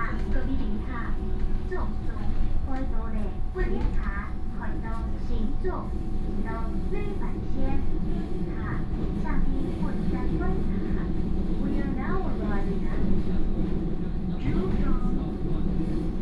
from Liujia Station to Zhuzhong Station, Train message broadcast
Hsinchu County, Taiwan